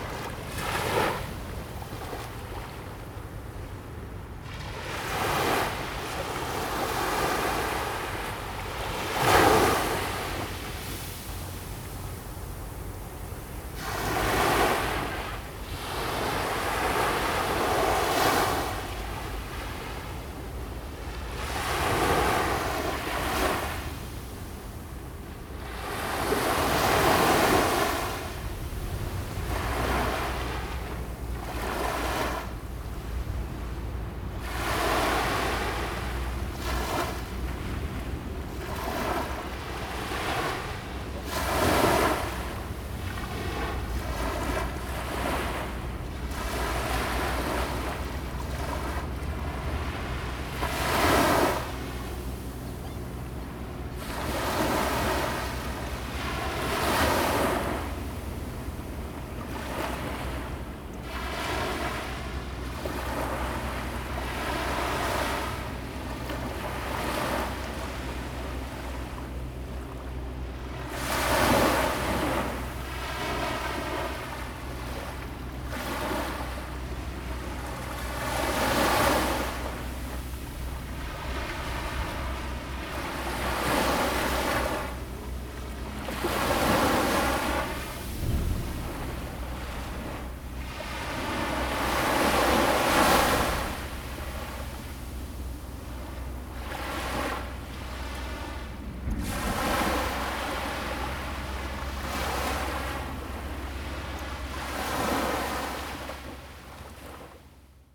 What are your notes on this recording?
sound of the waves, At the beach, Zoom H2n MS+XY +Sptial Audio